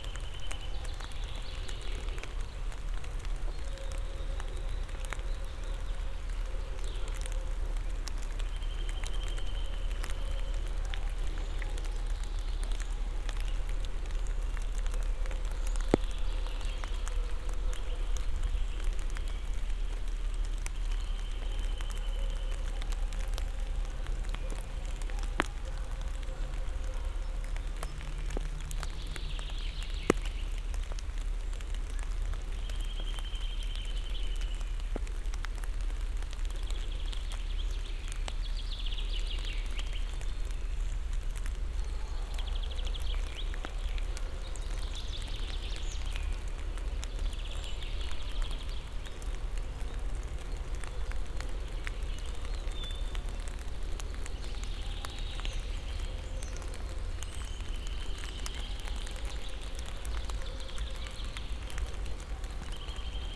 Morasko nature reserve, meadow with a fallen tree - wide ambience
(bianaural) recorded on a wide meadow. lots of place for sound to breathe and reverberate off the trees. construction sounds and barking coming from a nearby village.